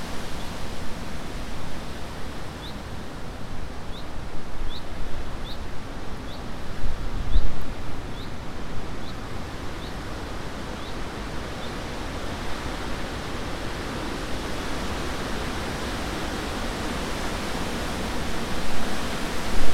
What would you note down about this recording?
Tascam DR05 placed on a bench, upwards towards the tall trees by the lake. Very windy day (dead kitten). At the end you can hear a plane approaching Luton.